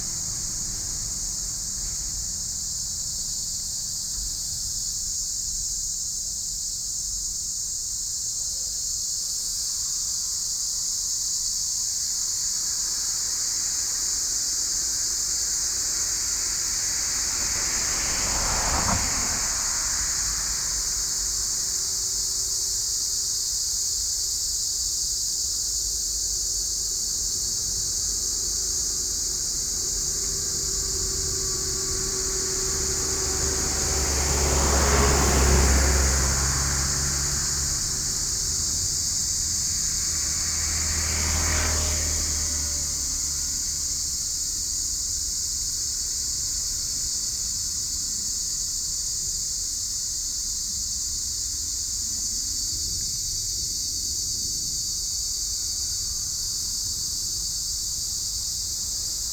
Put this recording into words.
Inside the temple, Bird calls, Sony PCM D50+soundmam okm